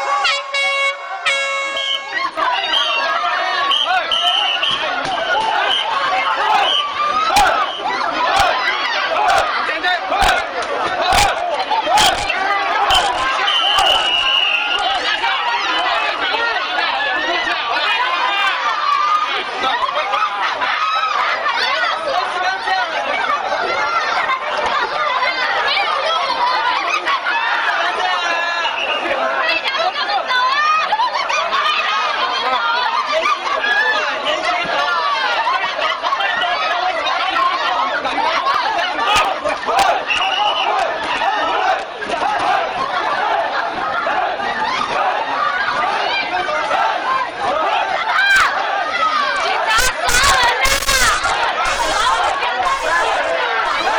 Sec., Zhongshan N. Rd., Zhongshan Dist. - Protest and confrontation
Police are working with protesting students confrontation, Sony ECM-MS907, Sony Hi-MD MZ-RH1